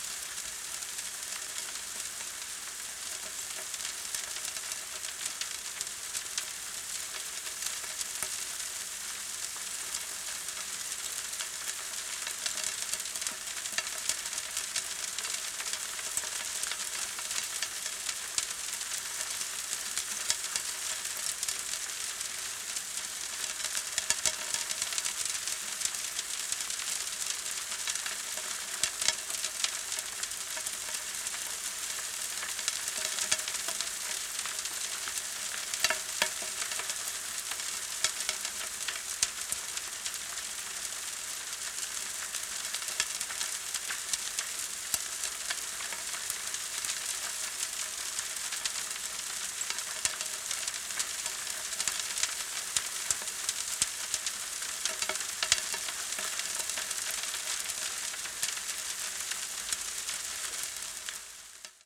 Maasvlakte, Maasvlakte Rotterdam, Niederlande - pipeline transporting sand
two akg 411p contact mics on pipe.